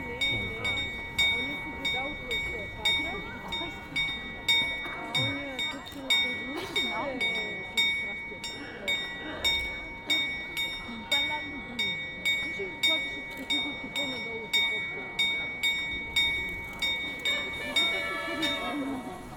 Recording of an Astronomical Clock in Prague on Sunday (with obvious crowds around).
Recorded with Soundman OKM + Zoom H2n
Praha, Česko, January 2017